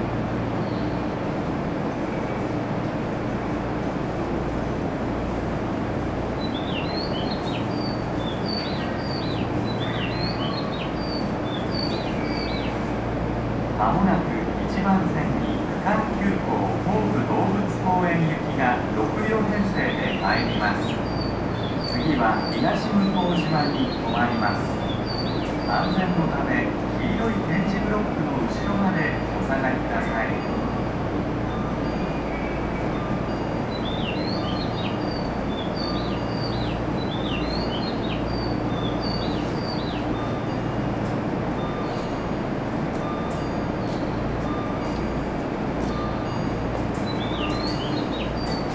Hikifune train station, platform - birds from speakers
platform on the train station. train idling, announcement, bell indicating train arrival, bird chirps played from speakers (yep, these are not real birds), door closes, train departing. (roland r-07)